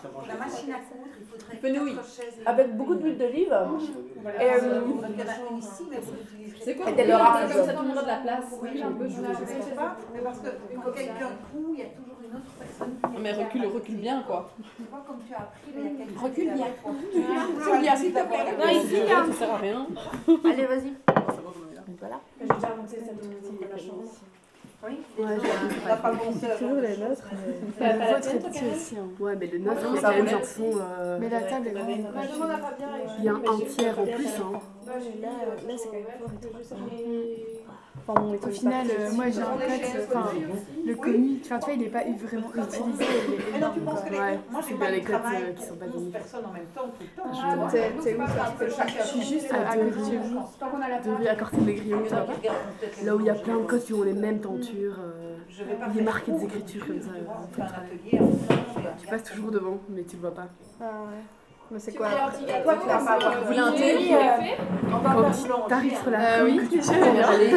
A KAP, in the Louvain-La-Neuve term is a "Kot-A-Projet". A kot is a house intended for students and projet means there's a project. In fact, KAP means students leaving there have a special projects, and there's hundred. We are here in the KAP Le Levant. Their project is to make and distribute bread. This bread is especially made by persons living with a mental handicap. This is a very-very-very friendly place.
On this day of activity, some students will learn to make bread. This recording is the short moment before workshop begins.